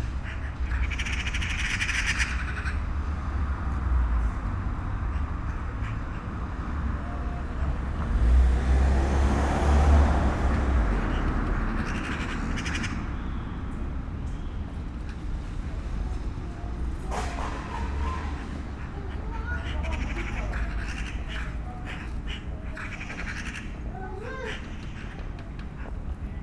10 magpies in a tree